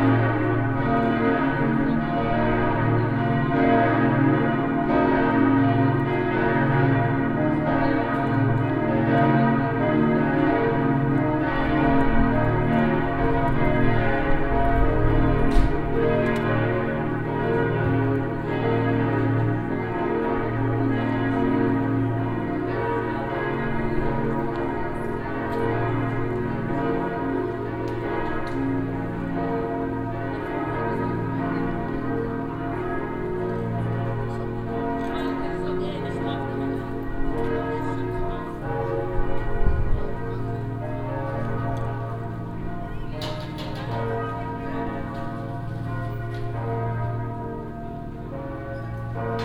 dortmund, reinoldi church, outdoor place, evening bells - dortmund, reinoldi church, outdoor place, evening bells
on the windy place in front of the reinoldi church - the evening bells
soundmap nrw - social ambiences and topographic field recordings
reinoldi kirche, ostenhellweg, May 2010